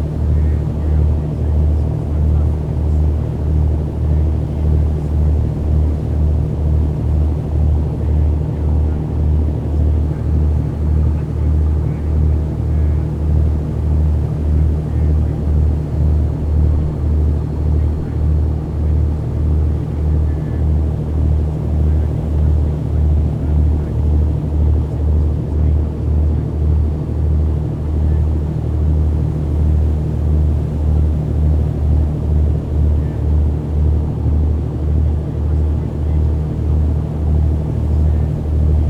Farne Islands ... - Grey Seal Cruise ...
Grey seal cruise ... Inner Farne ... background noise ... open lavalier mics clipped to baseball cap ...